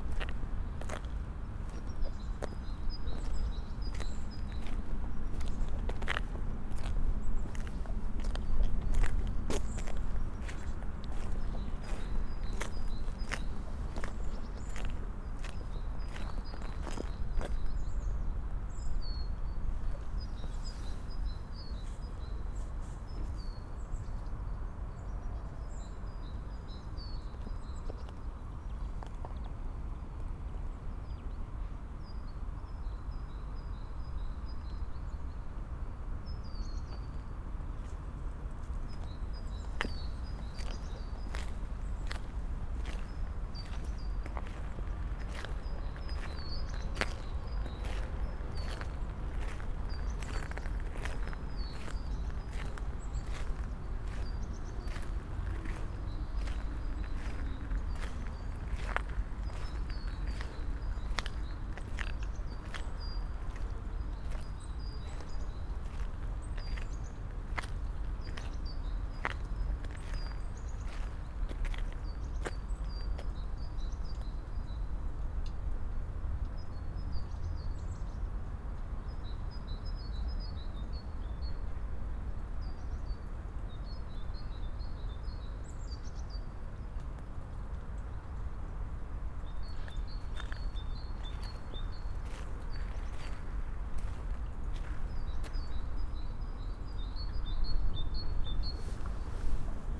abandoned container terminal, Berlin - short walk, ambience
short walk on a former container termina area. the place seems abandoned, rotten buildings, lots of debris and waste, somes traces of past usage. but it's weekend, so things may be different on a workday.
(SD702, DPA4060)
Berlin, Germany